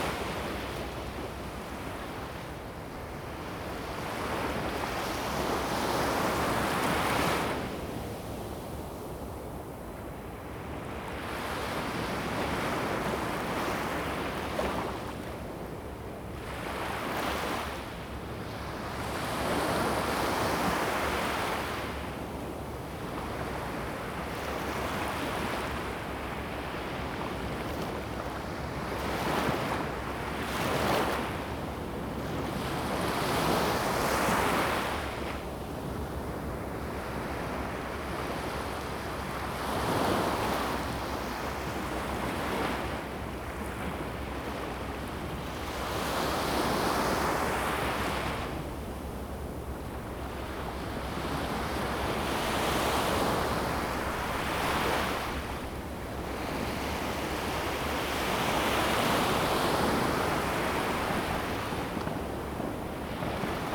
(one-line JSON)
{"title": "西子灣海水浴場, Kaohsiung County - Beach", "date": "2016-11-22 14:39:00", "description": "Sound of the waves, Beach\nZoom H2n MS+XY", "latitude": "22.62", "longitude": "120.26", "altitude": "1", "timezone": "Asia/Taipei"}